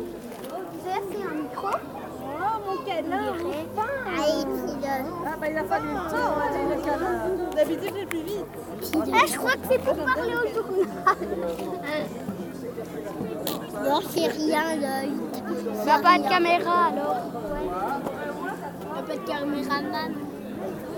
Mont-Saint-Guibert, Belgique - Soapbox race
A soapbox race in Mont-St-Guibert. Young children and gravity racer going very fast.